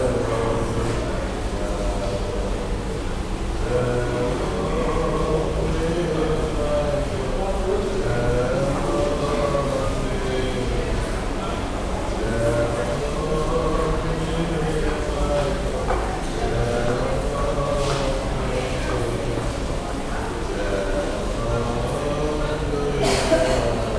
Sofia, Bulgaria, Metro 'Serdika' - Blind busker
Blind man singing in the metro passage. Binaural recording.